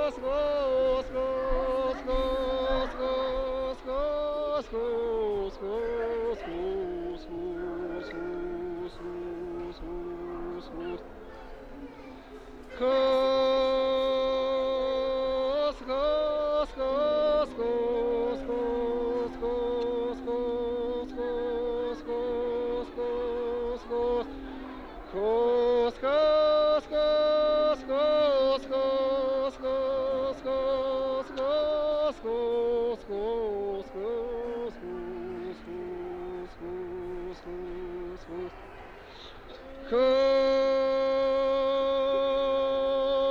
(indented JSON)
{
  "title": "National Museum of Scotland, Chambers St, Edinburgh - mongolian songs to calm you down in the city",
  "date": "2016-08-29 14:21:00",
  "description": "It was recorded in national Museum of Scotland in Edinburgh, at the world folk music section. The very first time I visited this museum I was a bit sad at that time but once i sat at the one of interective screen to listen ethnographic recordings, one of the songs calm me down and made me very happy. It was mongolian milking songs to calm cows and yaks while milking them. As an interactive screen it had phone to listen through. So i put my Roland R-26 recorder close to this telephone and recorded those songs together with enviromental sounds of museum.",
  "latitude": "55.95",
  "longitude": "-3.19",
  "altitude": "92",
  "timezone": "Europe/London"
}